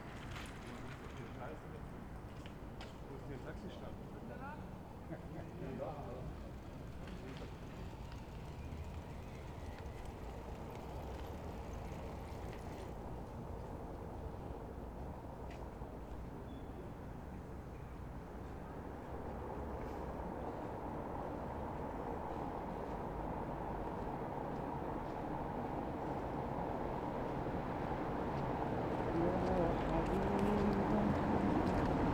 Berlin: Vermessungspunkt Maybachufer / Bürknerstraße - Klangvermessung Kreuzkölln ::: 20.08.2010 ::: 01:21